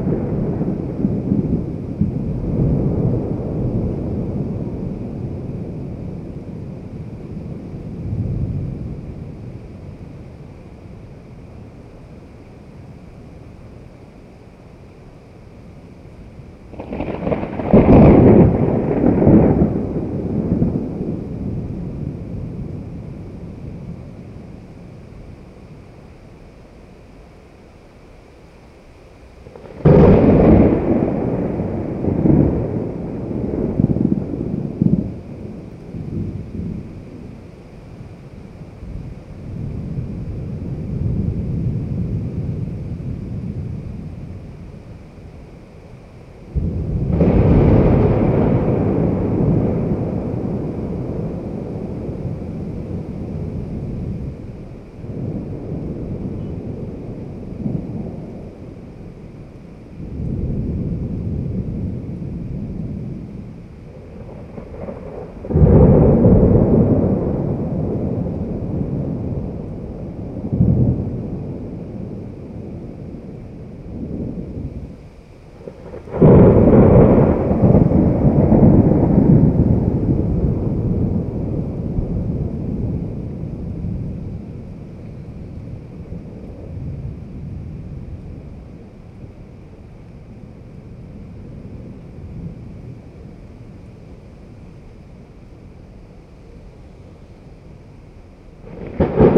R. Alabastro, Aclimação, São Paulo - Thunder and rain in Sao Paulo
Thunder and rain in Sao Paulo.
Recorded from the window of the flat, close to Parque Aclimaçao.